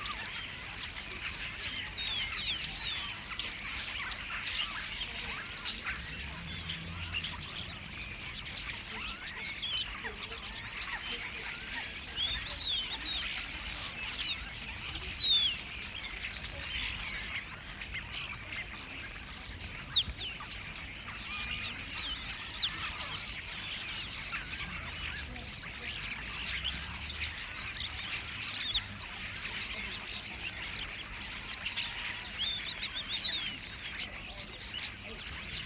With passing cars, voices and a drony train.
Region Midtjylland, Danmark, 28 September 2022, 17:38